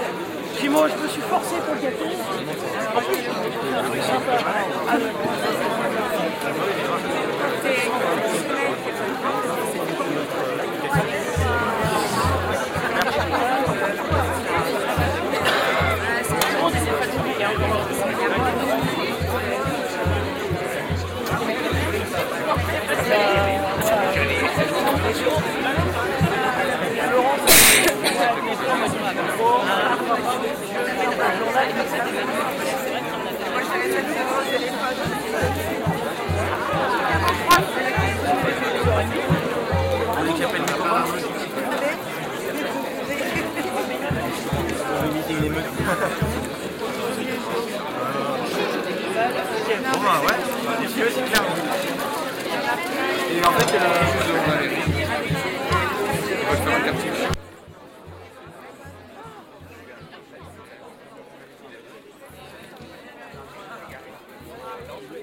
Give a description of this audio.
The mayor want to privatise the former theater and now movie theater - shops are the new solution. A demonstration with a samba group has gathered.